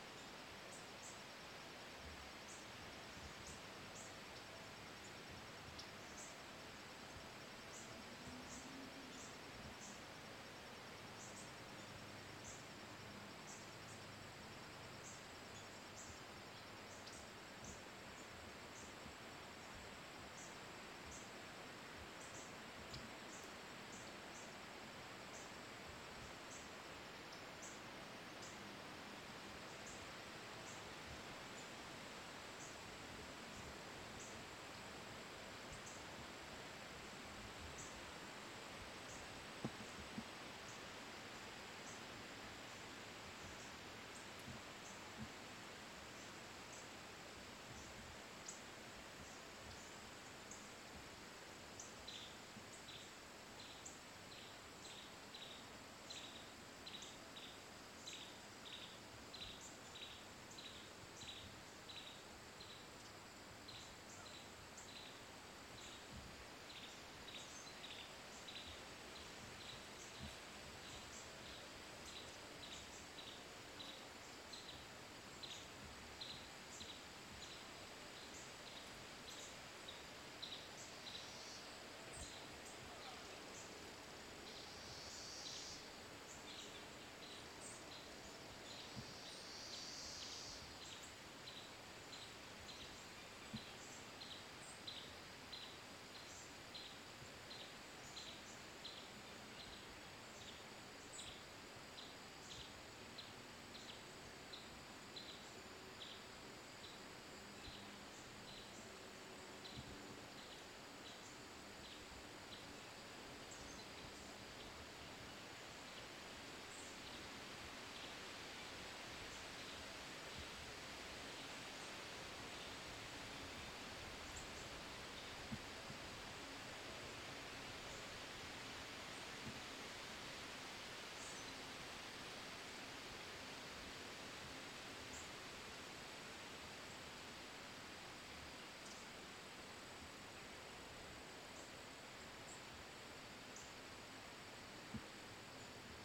Bugs and birds calling to each other over a river. Jerusalem Mill Area of Gunpowder Falls.